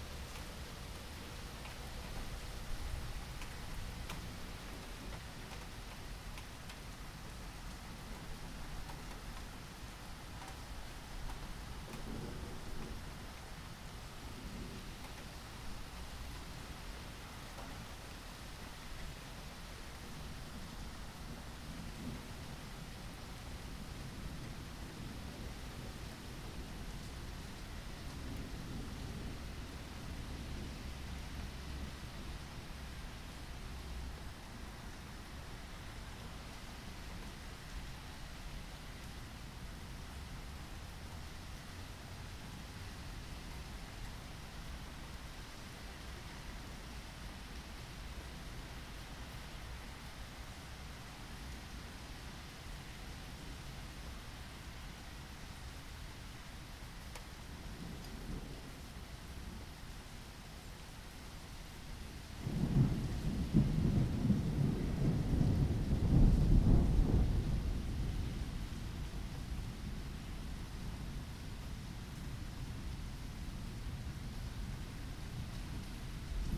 the rain is almost over - zoom - H1